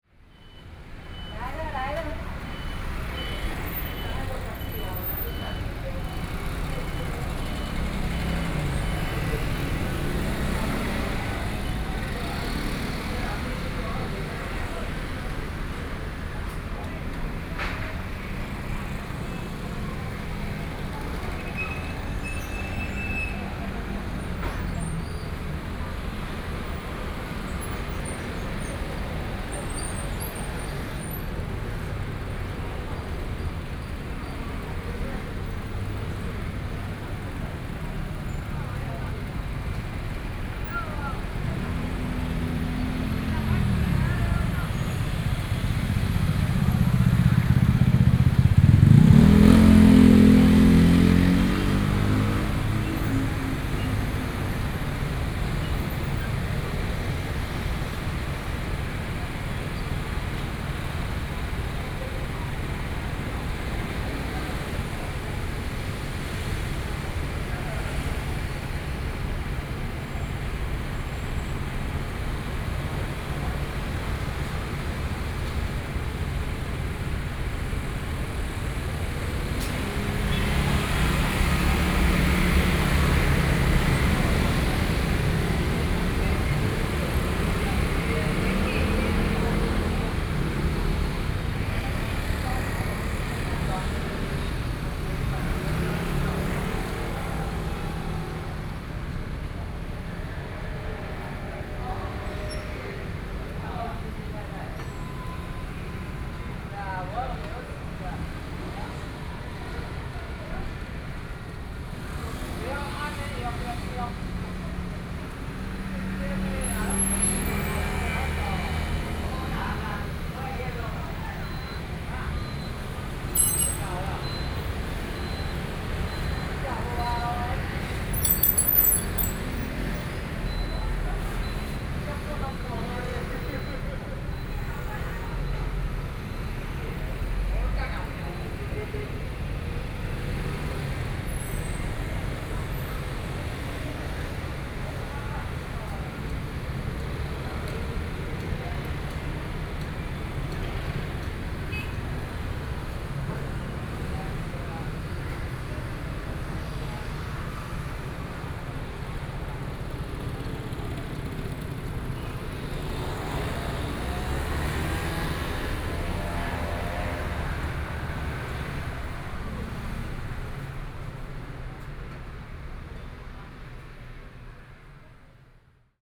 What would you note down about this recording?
In front of the hostel, Traffic Sound, Sound near the traditional markets